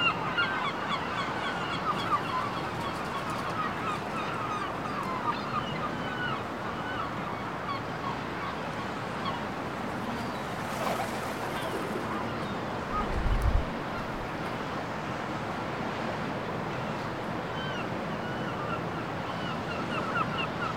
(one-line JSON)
{"title": "R. do Ouro, Porto, Portugal - Early morning in the Douro", "date": "2019-10-13 06:00:00", "description": "This recording was captures in the early morning (6am) by the side of the Douro river, between the cities of Porto and Vila Nova de Gaia.", "latitude": "41.15", "longitude": "-8.65", "altitude": "2", "timezone": "Europe/Lisbon"}